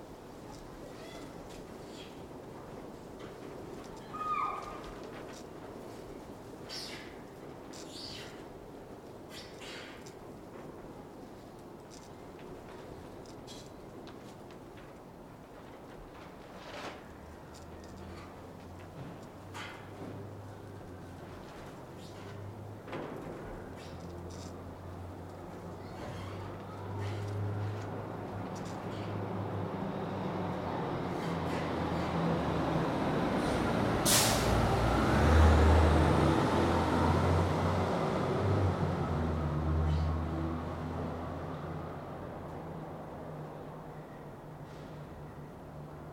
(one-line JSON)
{"title": "Rue Proudhon, Saint-Denis, France - Pallissade and wind in a very little street-YC", "date": "2020-04-12 21:34:00", "description": "Le long de palissade de chantier, du vent les agite, a St Denis durant le confinement", "latitude": "48.91", "longitude": "2.36", "altitude": "39", "timezone": "Europe/Paris"}